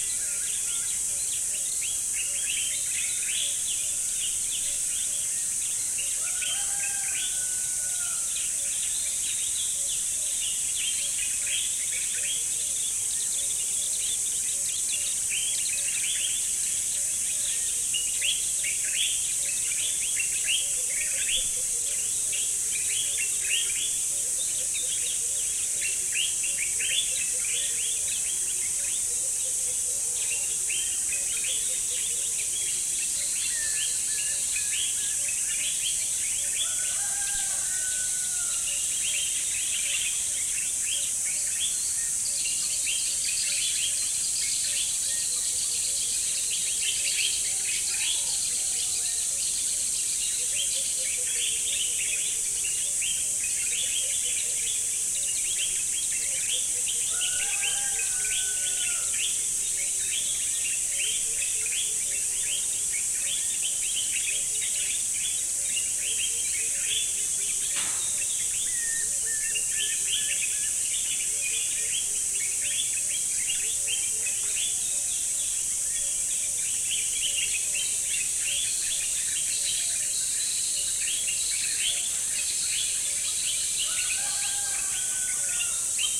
Unnamed Road, Gambia - Mara Kissa dawn
Dawn in Mara Kissa near river, during mango season.
20 June 2004, ~06:00